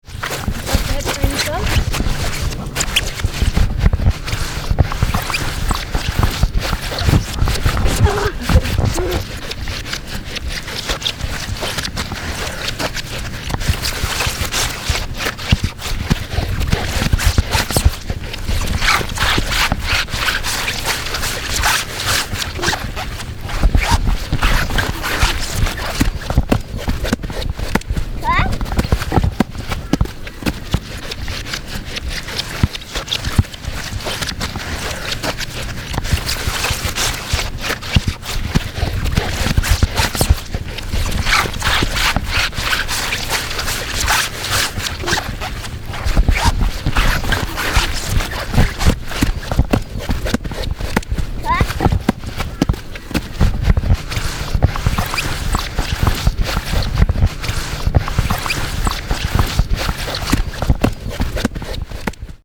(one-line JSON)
{"title": "Currumbin Waters QLD, Australia - Squelching grass", "date": "2015-09-28 10:00:00", "description": "Children stamping and rubbing their feet through the wet grass on the playing field on a damp September morning.\nPart of a September holiday 'Sounds in Nature' workshop run by Gabrielle Fry, teaching children how to use recording equipment to appreciate and record sounds in familiar surroundings. Recorded using a Rode NTG-2 and Zoom H4N.", "latitude": "-28.15", "longitude": "153.46", "altitude": "2", "timezone": "Australia/Brisbane"}